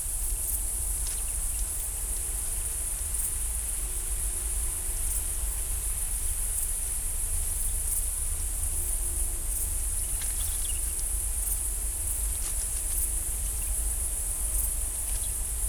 Negast forest, Waldteich, Pond, Rügen - Rodent activity at night
Someone is quite active and undisturbed around the mics, some high pitched squeaks can be heard at minute 1 - I have no ID
Vorpommern-Rügen, Mecklenburg-Vorpommern, Deutschland